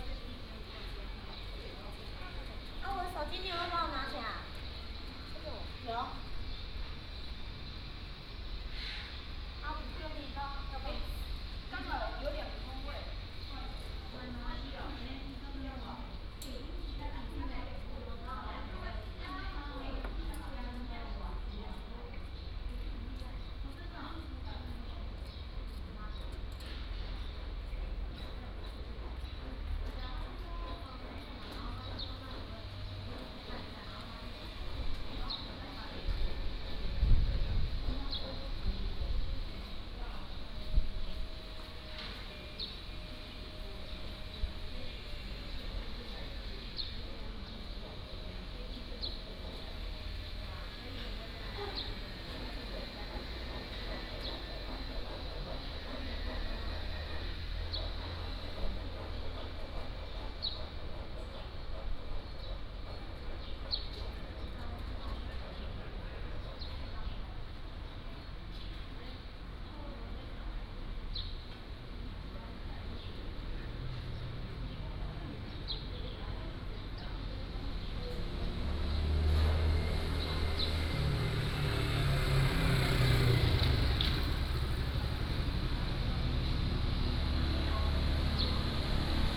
Small village, Birdsong, Traffic Sound